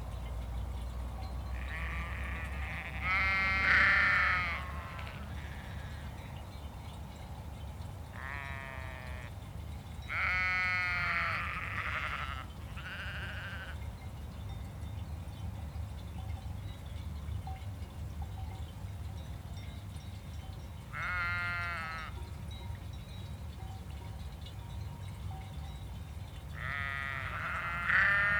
[Hi-MD-recorder Sony MZ-NH900, Beyerdynamic MCE 82]